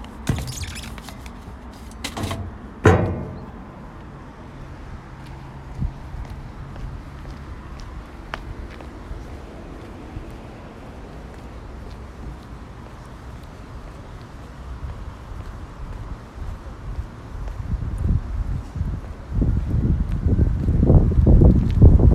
TEGSPLAN, Umeå, Sverige - Posting Letters
Norrland, Sverige, 8 May 2020